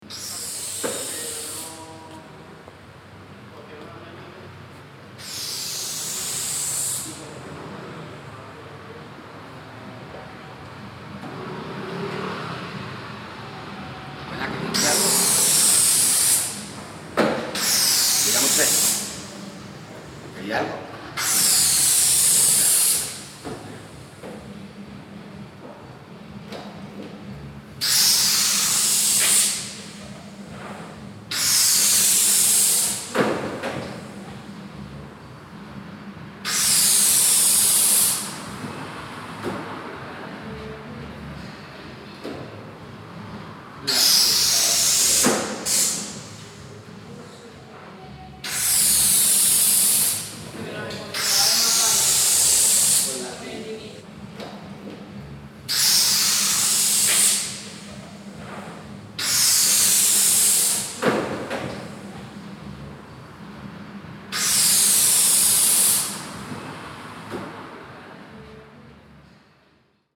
Sevilla, Provinz Sevilla, Spanien - Sevilla - Teatro Alameda - balloon filling
At the foyer ofv the Teatro de Alameda. The sound of balloons being filled with helium gas.
international city sounds - topographic field recordings and social ambiences
Sevilla, Spain, 9 October